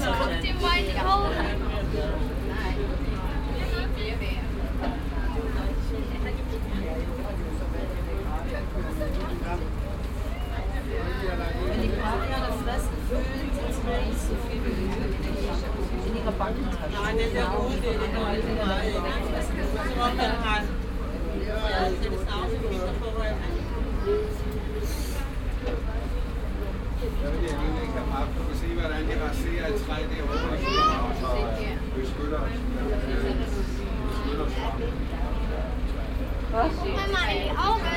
knuthenborg, safari park, ape bus
inside a caged bus that is packed with international visitors that drives thru an ape territory.
international sound scapes - social ambiences and topographic field recordings
Bandholm, Denmark